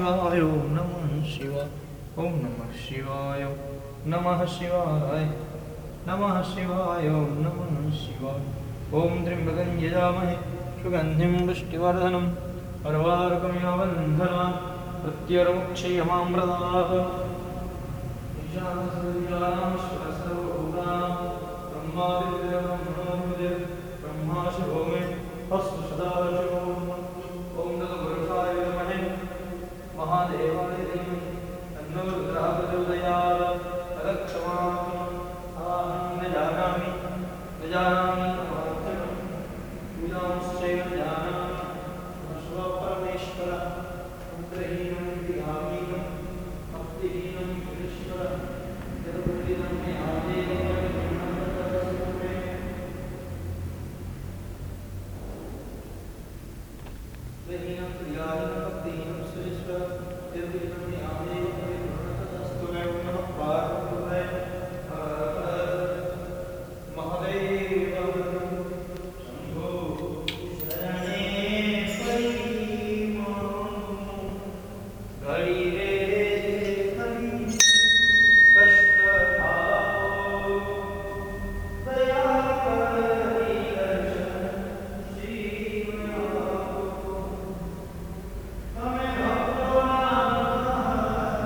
Shiva Temple, Nakasero Hill, Kampala, Uganda - Morning offerings...
The early morning hum of Kampala resonates in the dome of the temple, the city market is buzzing in the streets all around, but here inside, the priest is following his routine of morning offerings and prayers… people are dropping in on the way to work, or to the market, walk around from altar to altar, praying, bringing food offerings, ringing a bell at each altar…
11 July 2010